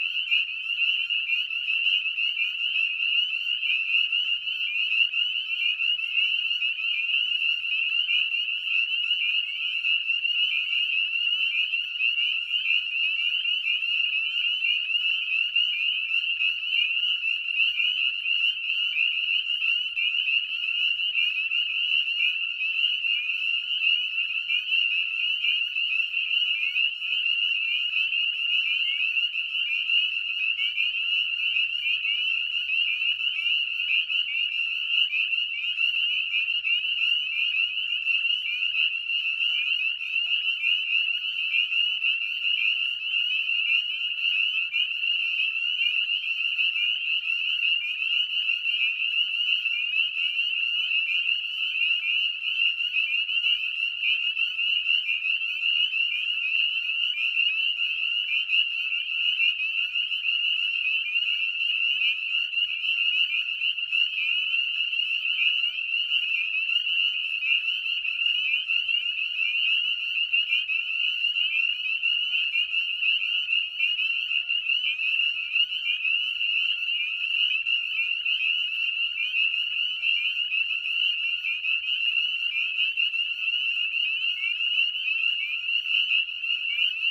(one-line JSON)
{"title": "Roy H. Park Preserve. Finger Lakes Land Trust - Spring Peepers (Pseudacris crucifer)", "date": "2021-04-08 21:00:00", "description": "Spring Peepers (Pseudacris crucifer) recorded in wetland marsh.\nSennheiser MKH 8040 stereo pair on stands, 1.5 metre spread.", "latitude": "42.43", "longitude": "-76.32", "altitude": "433", "timezone": "America/New_York"}